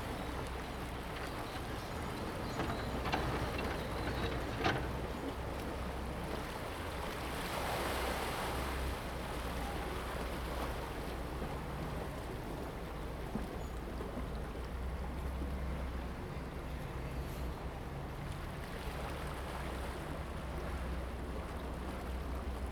開元港, Koto island - Tidal waves
On the pier, Tidal waves, Consumers slope block, Construction cranes
Zoom H2n MS+XY